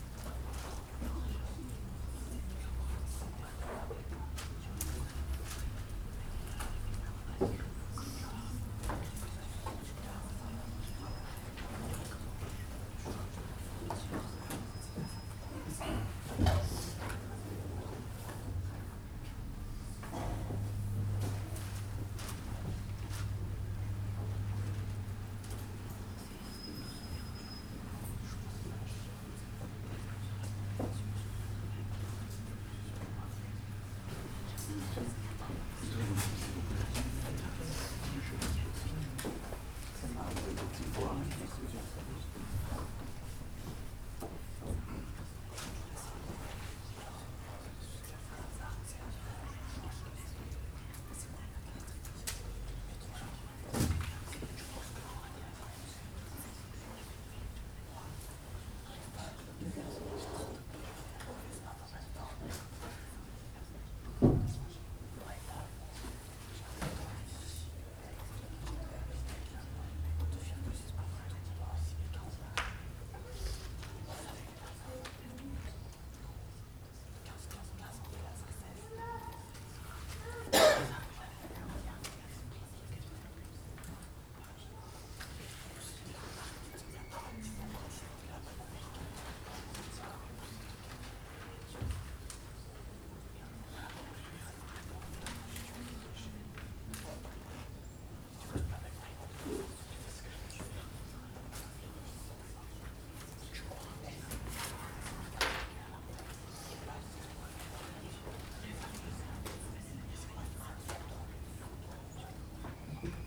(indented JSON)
{
  "title": "Place de la Légion dHonneur, Saint-Denis, France - Médiatheque Centre Ville - Espace Travaille",
  "date": "2019-05-25 13:30:00",
  "description": "The working space, mostly filled with young students working and whispering very respectfully (recorded using the internal microphones of a Tascam DR40).",
  "latitude": "48.93",
  "longitude": "2.36",
  "altitude": "32",
  "timezone": "Europe/Paris"
}